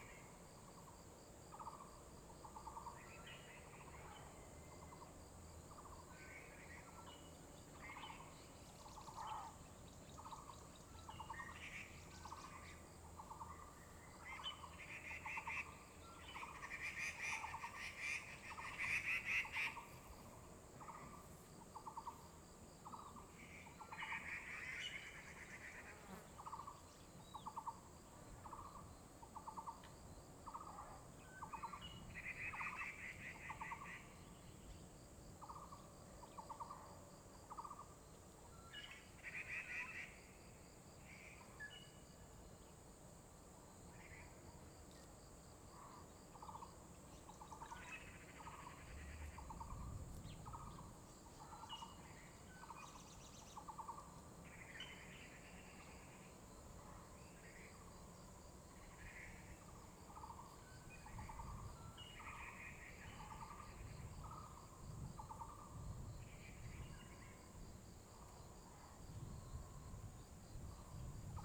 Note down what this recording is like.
Beside the pool, Bird cry, traffic sound, Many kinds of bird calls, Zoom H2n MS+XY